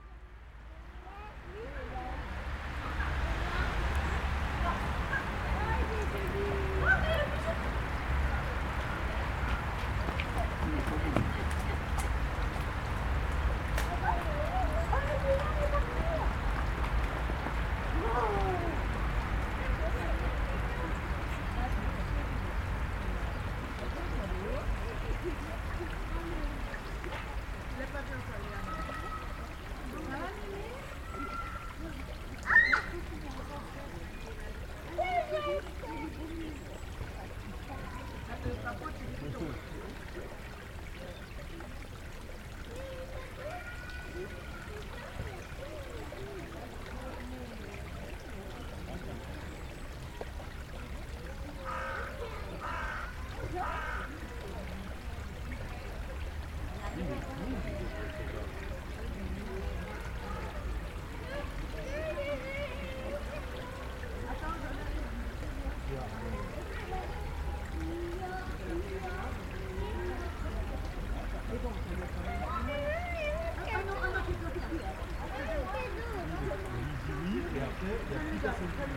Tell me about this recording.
Dans le Jardin des plantes, rare chant de fauvette en septembre.